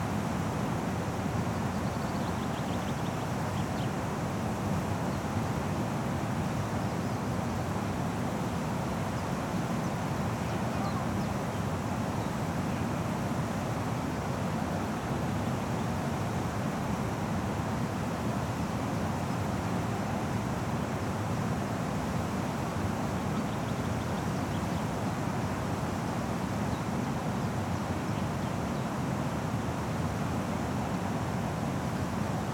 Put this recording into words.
ventilation shaft from an oil shale mine 70+ meters below